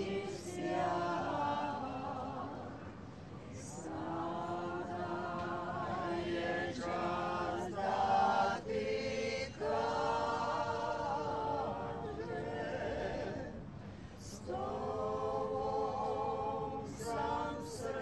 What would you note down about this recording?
we, e having a drink when the 8 people at the next table show their choral talent, this was done during the sitting down part of our EBU Radio Drama workshop sound walk with Milos